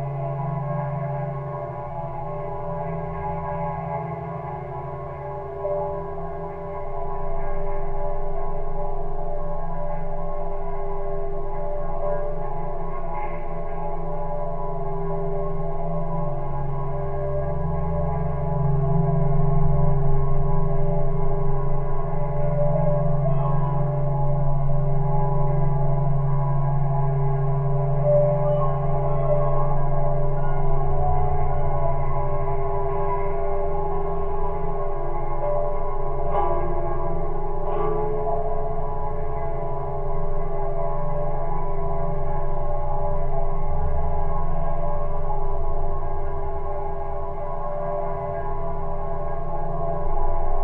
Panevėžys, Lithuania, under the bridge, railings
Geophone on some railings under the bridge. Drone is the tone!